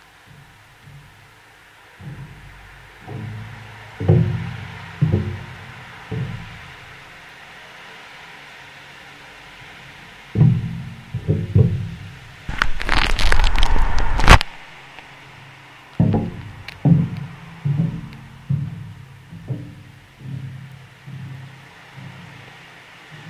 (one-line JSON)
{"title": "Trieste. Railway bridge steps - Trieste. Railway bridge steps 2", "description": "More steps over the railway bridge (contact microphone)", "latitude": "45.67", "longitude": "13.76", "altitude": "7", "timezone": "Europe/Berlin"}